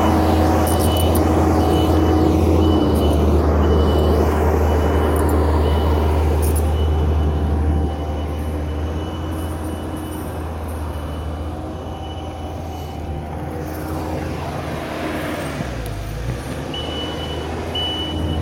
Ames, IA, USA - Coming Soon: Stadium View Student Living...